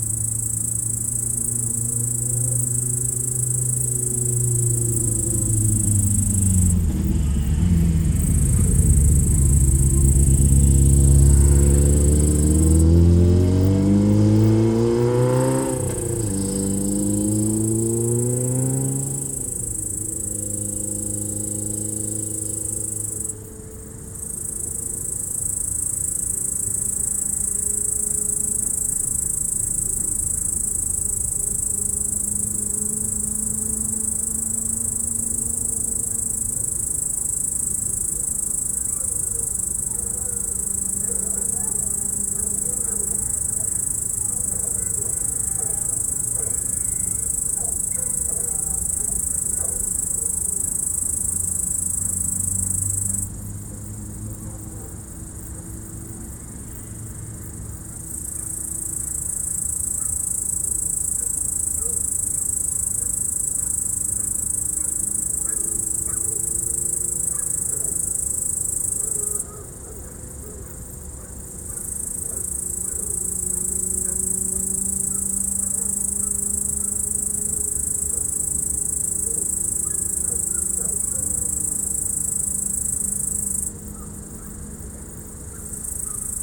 Route du col du Chat virage belvédère, les insectes et les bruits de la vallée paysage sonore au crépuscule. Enregistreur Tascam DAP1 DAT. Extrait d'un CDR gravé en 2006 .
9 September, 20:30